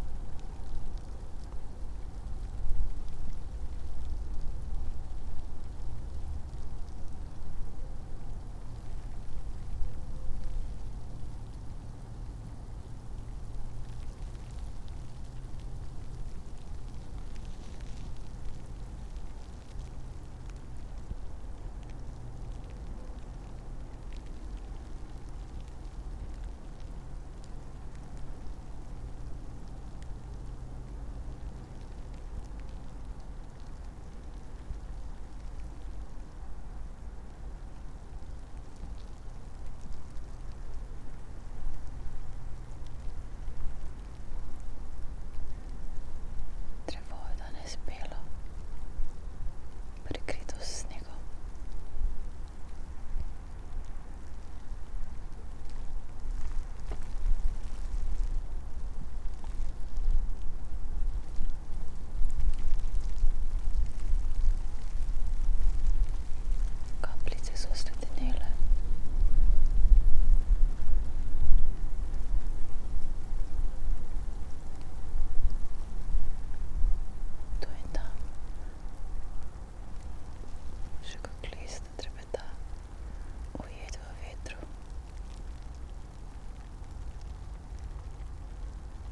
light snow, spoken words and whisperings, wind, snowflakes ...